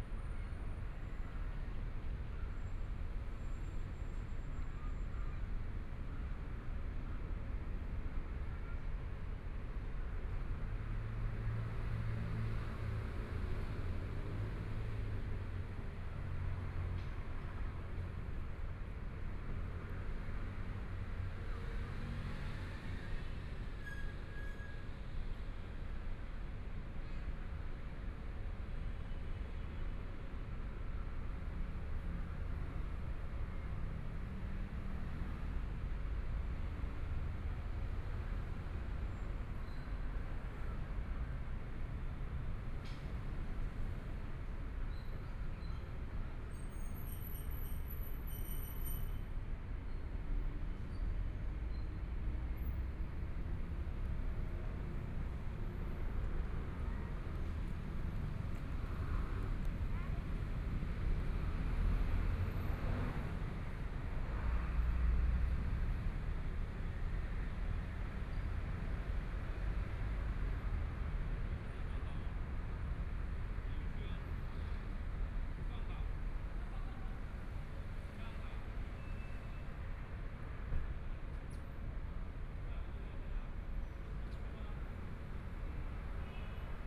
XinShou Park, Taipei City - Sitting in the park
Sitting in the park, Environmental sounds, Motorcycle sound, Traffic Sound, Binaural recordings, Zoom H4n+ Soundman OKM II
6 February, 18:13